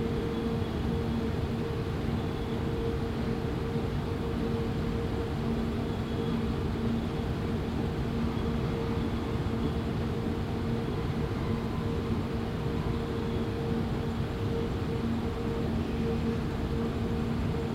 On a fact-finding mission to Ghana in February, i made an overnight transit at Brussels International Airport and documented Anthrophony of the space.
Please listen with headphones for subtle details in the sound. Thank you.
Date: 15.02.2022.
Recording format: Binaural.
Recording gear: Soundman OKM II into ZOOM F4.